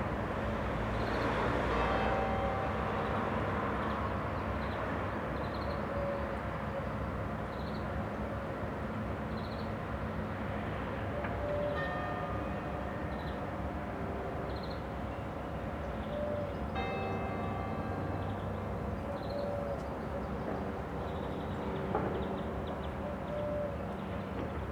Villa Arson, Nice, France - Bells and Birds near Villa Arson
Besides the birdlife and traffic around the Villa Arson a bell is slowly tolling from the church of St Batholome.